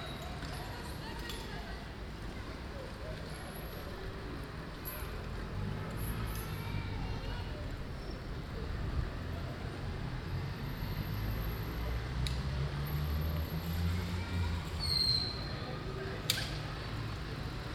Kortenbos, Den Haag, Nederland - Rond de Grote Kerk
Binaural recording.
A sunny Saturday afternoon around the big church in The Hague. The actual street name is Rond de Grote Kerk which means ‘Around the big church’.
Een zonnige zaterdagsmiddag rond de Grote Kerk in Den Haag. Rond de Grote Kerk is ook daadwerkelijk de straatnaam.